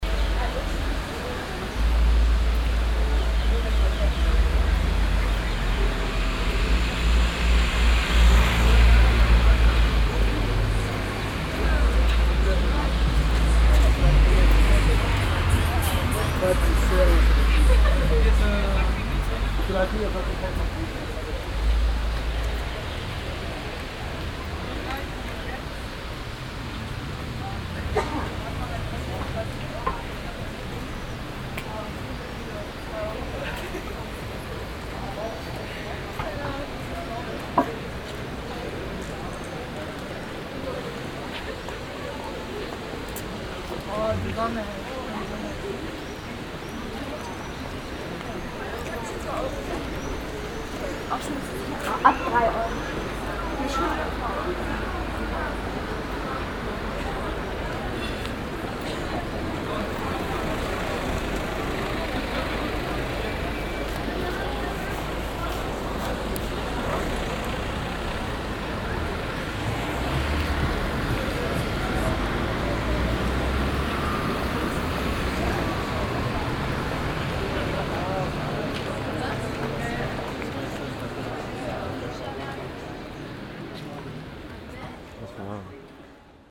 steps on the pavement, a losse metall plate and the church evening bells in the distance
soundmap d - social ambiences and topographic field recordings